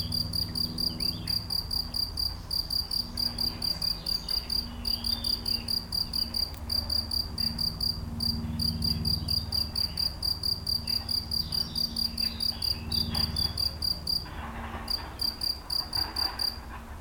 LHoumeau, France - Locust
Locust singing in the grass, along a WW2 abandoned bunker.
May 2018